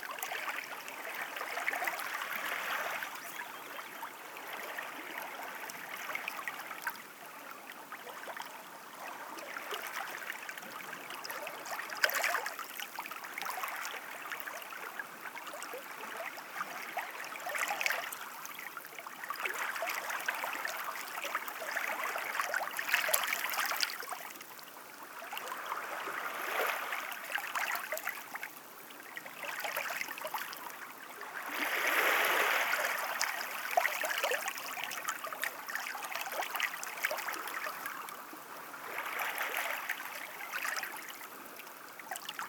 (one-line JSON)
{"title": "The lapping of the waves, White Sea, Russia - The lapping of the waves", "date": "2014-06-11 22:40:00", "description": "The lapping of the waves.\nЛегкий плеск морских волн.", "latitude": "65.33", "longitude": "39.74", "altitude": "16", "timezone": "Europe/Moscow"}